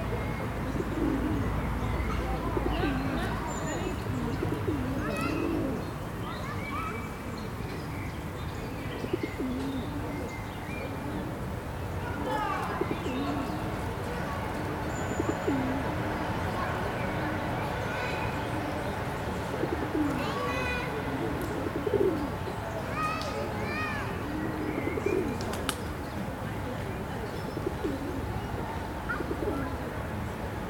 {
  "title": "Jardin Pierre Rous, Imp. André Lartigue, Toulouse, France - Pierre Rous",
  "date": "2022-04-15 16:00:00",
  "description": "ambience of the park\ncaptation : ZOOM H4n",
  "latitude": "43.62",
  "longitude": "1.47",
  "altitude": "153",
  "timezone": "Europe/Paris"
}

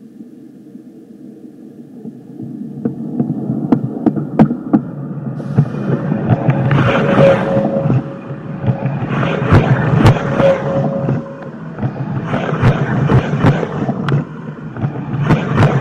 {
  "title": "Trieste. Railway bridge. - Trieste. Railway bridge",
  "description": "Second sound recording of a train passing by me (with a contact microphone)",
  "latitude": "45.67",
  "longitude": "13.76",
  "altitude": "7",
  "timezone": "Europe/Berlin"
}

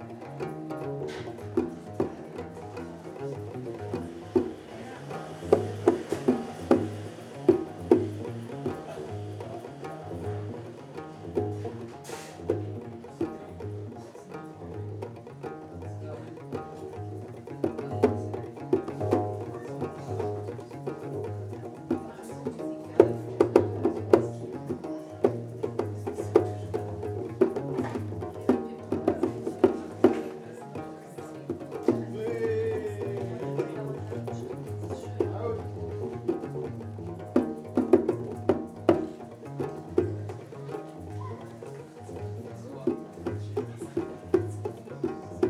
{
  "title": "Rahba Kedima, Marrakech, Marokko - Gnawa",
  "date": "2014-02-27 22:40:00",
  "description": "Gnawa improvisation during a 12h radio peformance at cafe des Epices, Marrakesh\n(Olympus LS5)",
  "latitude": "31.63",
  "longitude": "-7.99",
  "timezone": "Africa/Casablanca"
}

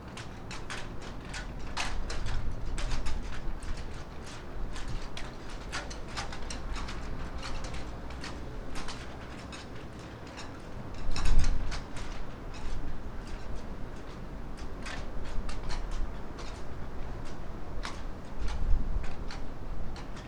{
  "title": "Utena, Lithuania, flagsticks in the wind",
  "date": "2013-07-22 10:30:00",
  "latitude": "55.50",
  "longitude": "25.59",
  "altitude": "107",
  "timezone": "Europe/Vilnius"
}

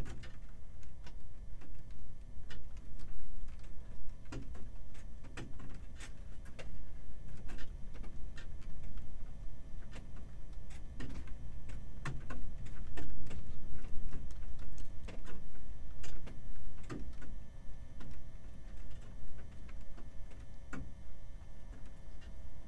{
  "title": "abandoned cabin, Utena, Lithuania",
  "date": "2019-03-24 15:20:00",
  "description": "theres's some abandoned, rusty cabin in a meadow...some part from soviet times bus. windy day. I placed my omni mics inside and electromagnetris antenna Priezor outside.",
  "latitude": "55.53",
  "longitude": "25.65",
  "altitude": "133",
  "timezone": "Europe/Vilnius"
}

